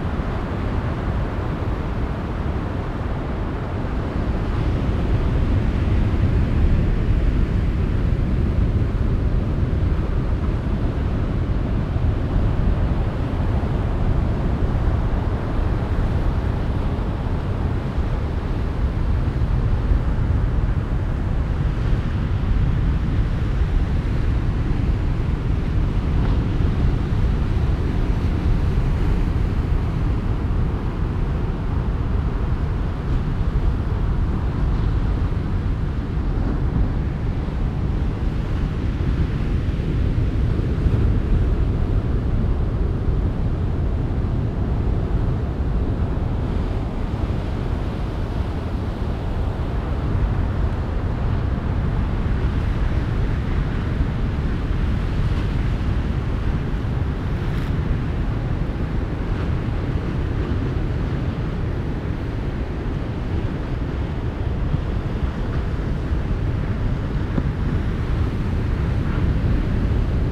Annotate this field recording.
The Roar of large waves breaking on the beach in a remote part of western Australia. In the beginning of the recording black oystercatchers can be heard calling as they fly along the coastline. Recorded with a Sound Devices 702 field recorder and a modified Crown - SASS setup incorporating two Sennheiser mkh 20 microphones.